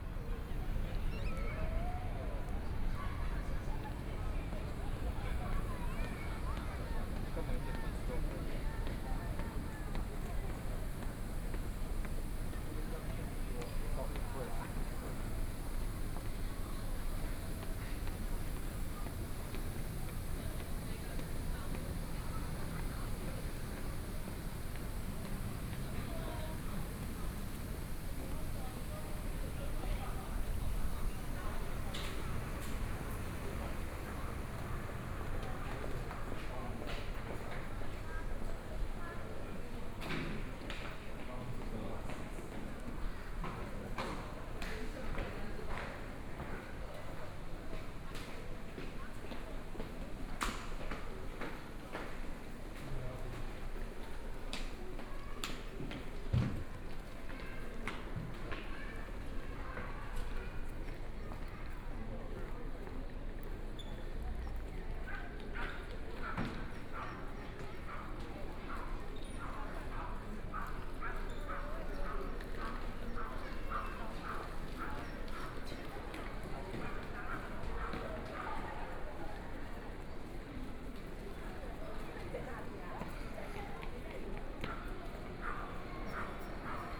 Walking through the stationFrom the train station hall, To MRT station platform
Please turn up the volume a little
Binaural recordings, Sony PCM D100 + Soundman OKM II
Banqiao District, New Taipei City, Taiwan, 1 March, 17:00